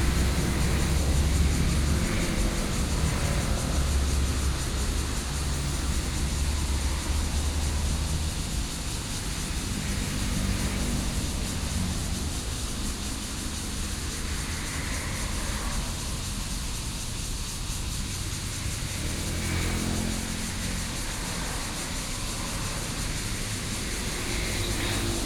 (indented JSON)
{
  "title": "Daye Rd., Beitou Dist. - Sitting on the roadside",
  "date": "2014-07-09 18:14:00",
  "description": "Sitting on the roadside, Hot weather, Cicadas sound, Traffic Sound",
  "latitude": "25.14",
  "longitude": "121.50",
  "altitude": "11",
  "timezone": "Asia/Taipei"
}